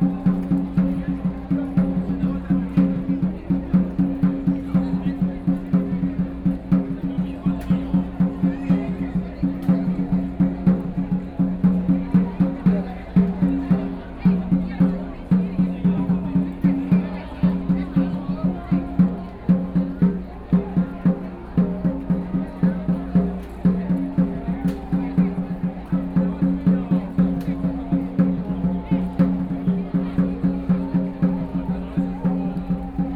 National Chiang Kai-shek Memorial Hall - Square entrance
Drum, Selling ice cream sound, Binaural recordings, Sony PCM D50 + Soundman OKM II
10 October, ~20:00